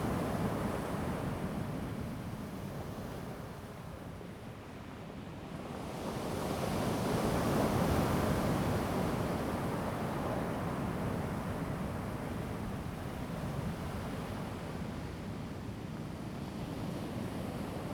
南田海岸親水公園, 達仁鄉南田二號橋 - Waves and Rolling stones
Waves, Rolling stones
Zoom H2n MS+XY
Taitung County, Taiwan